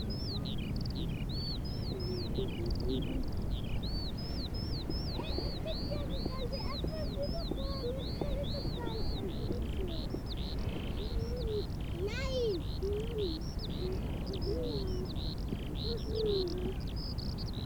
birds, vistors of the park
the city, the country & me: april 3, 2011